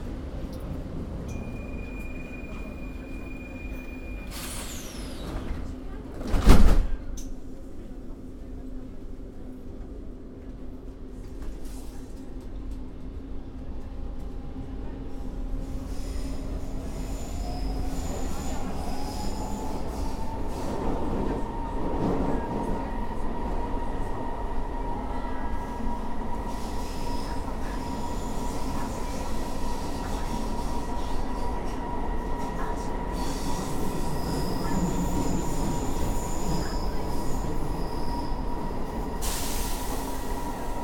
City of Brussels, Belgium - Metro between De'Brouckere and Gare Centrale
The Metro recorded with EDIROL R-09.